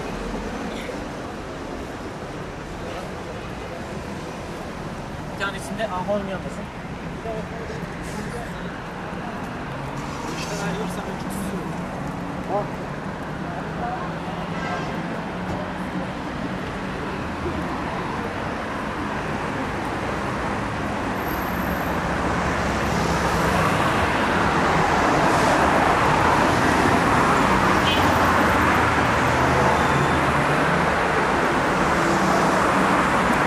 Fullmoon Nachtspaziergang Part VI
Fullmoon on Istanul. Crossing Büyükdere Caddesi. Crossing it for four minutes.